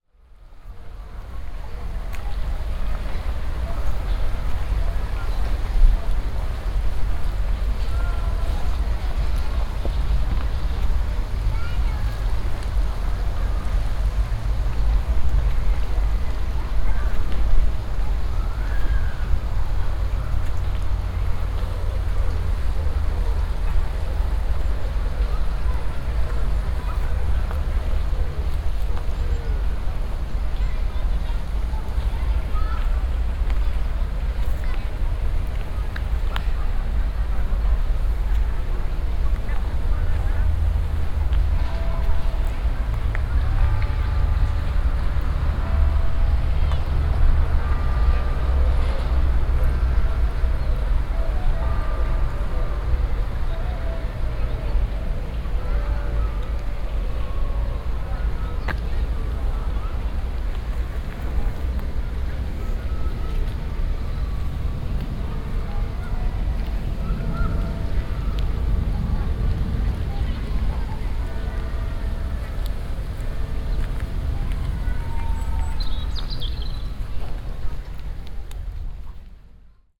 Botanic Garden, Nantes, France - (604) Botanic garden atmosphere
Botanic garden binaural atmosphere.
recorded with Soundman OKM + Sony D100
sound posted by Katarzyna Trzeciak